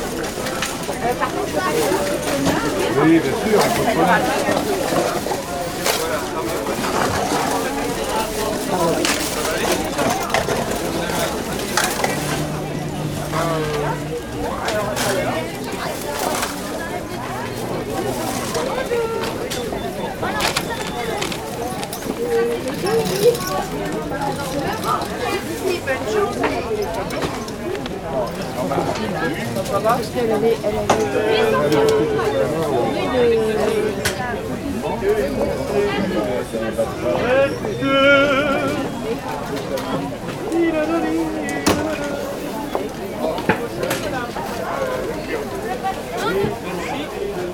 L'Aigle, France - Marché de l'Aigle 3
Ambiance au marché de l'Aigle, Zoom H6 et micros Neumann